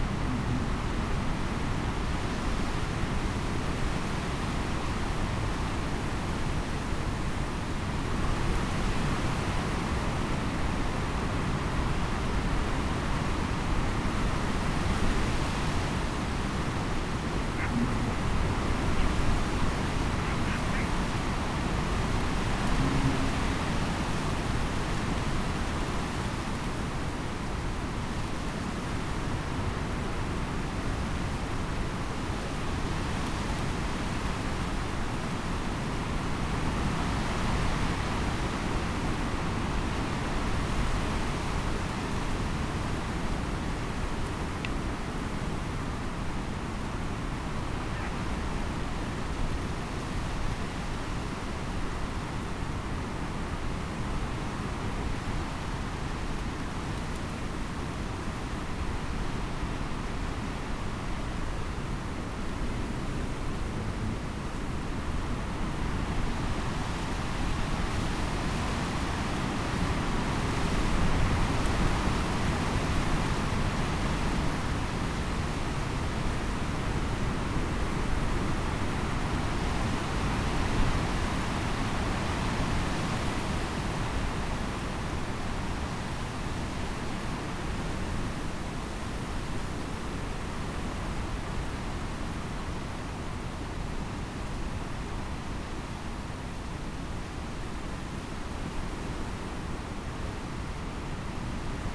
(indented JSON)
{
  "title": "laaksum: wäldchen - the city, the country & me: copse",
  "date": "2011-07-02 16:08:00",
  "description": "wind blowing through the trees, voices\nthe city, the country & me: july 2, 2011",
  "latitude": "52.85",
  "longitude": "5.41",
  "altitude": "1",
  "timezone": "Europe/Amsterdam"
}